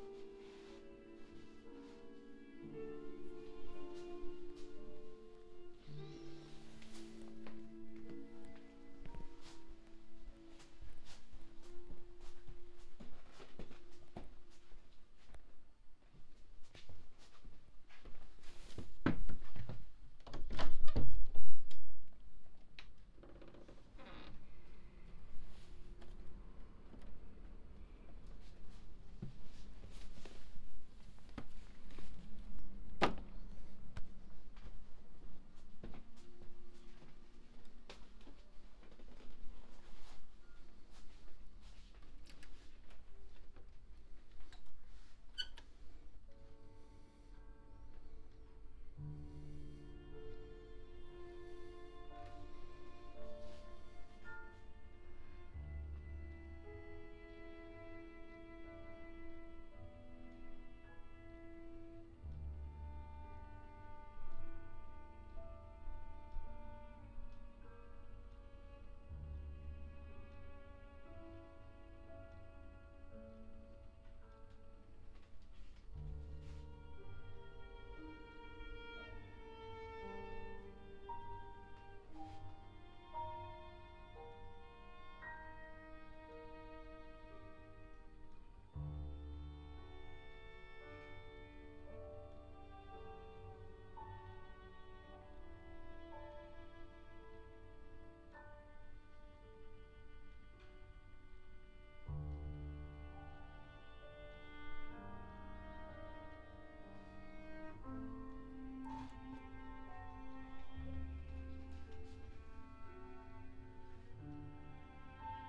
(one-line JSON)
{"title": "Ascolto il tuo cuore, città. I listen to your heart, city. Several chapters **SCROLL DOWN FOR ALL RECORDINGS** - “Outdoor market on Monday in the square at the time of covid19”: Soundwalk", "date": "2020-11-30 12:11:00", "description": "“Outdoor market on Monday in the square at the time of covid19”: Soundwalk\nChapter CXLV of Ascolto il tuo cuore, città. I listen to your heart, city.\nMonday, November 30th 2020. Walking in the outdoor market at Piazza Madama Cristina, district of San Salvario, more then two weeks of new restrictive disposition due to the epidemic of COVID19.\nStart at 00:11 p.m. end at 00:32 p.m. duration of recording 30:49”\nThe entire path is associated with a synchronized GPS track recorded in the (kml, gpx, kmz) files downloadable here:", "latitude": "45.06", "longitude": "7.68", "altitude": "245", "timezone": "Europe/Rome"}